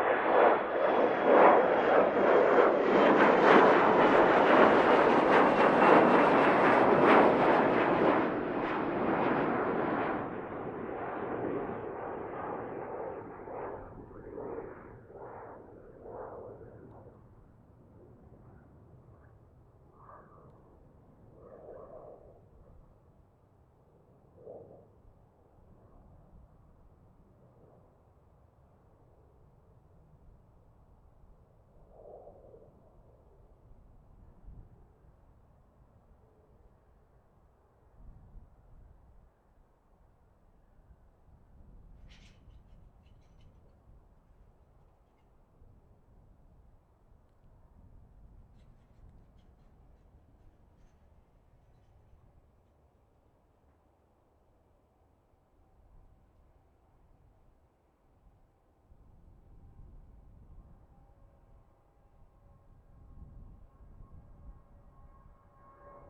MSP Spotters Park - MSP 30L Operations from Spotters Park
Landings and takeoffs on Runway 30L at Minneapolis/St Paul International Airport recorded from the Spotters Park.
Recorded using Zoom H5